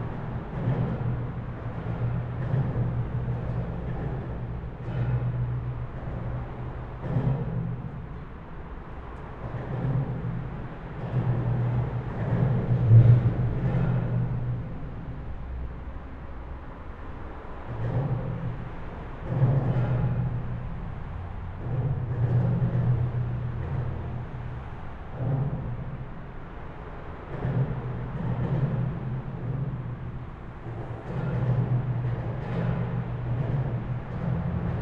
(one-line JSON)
{"title": "Berlin Wall of Sound, under the new highway bridge harbour britz-ost - traffic beats under motorway bridge", "date": "2013-08-17 12:00:00", "description": "percussive traffic under motorway bridge\n(SD702, Audio Technica BP4025)", "latitude": "52.46", "longitude": "13.46", "altitude": "32", "timezone": "Europe/Berlin"}